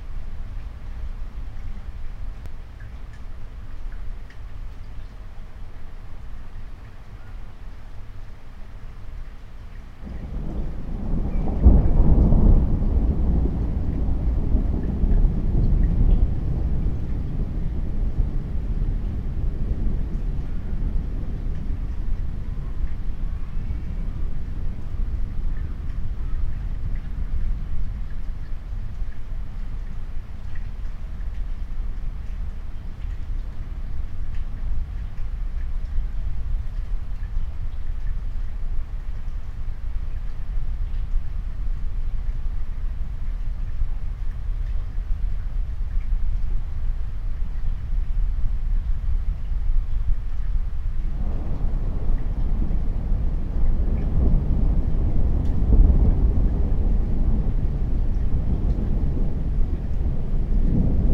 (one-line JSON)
{"title": "Park De Horst Den Haag, Nederland - Thunderstorms at night", "date": "2020-06-12 01:41:00", "description": "Recorded with a Philips Voice Tracker VT7500\nTotlal lenght has been shortened to just under 3 minutes.", "latitude": "52.09", "longitude": "4.36", "altitude": "2", "timezone": "Europe/Amsterdam"}